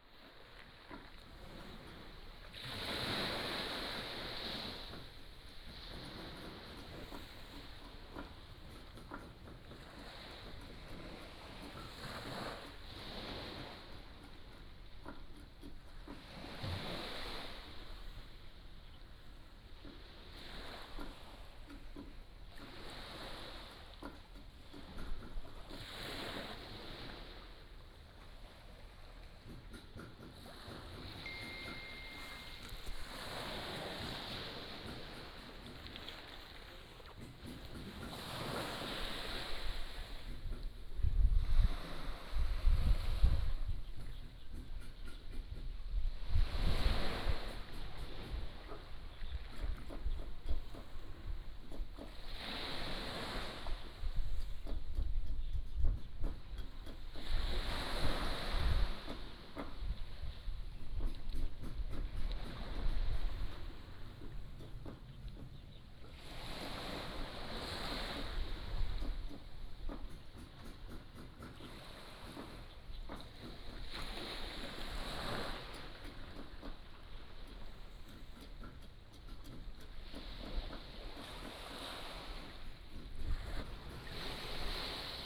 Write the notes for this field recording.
Sound of the waves, Small village, Small pier